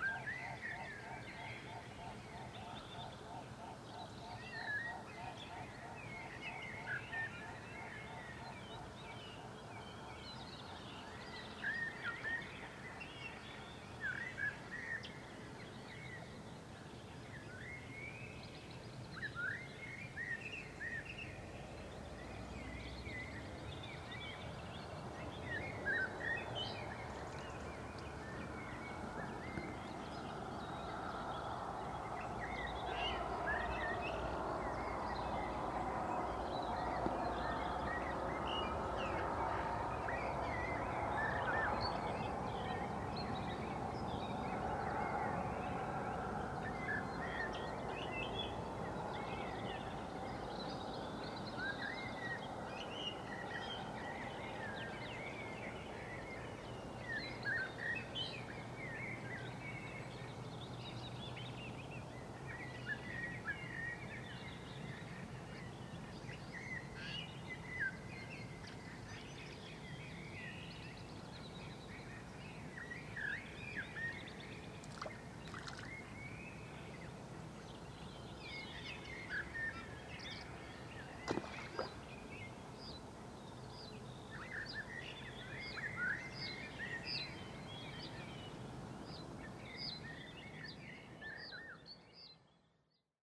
Mooste lake, Põlvamaa
Dawn chorus, early May, south Estonia, Sony M10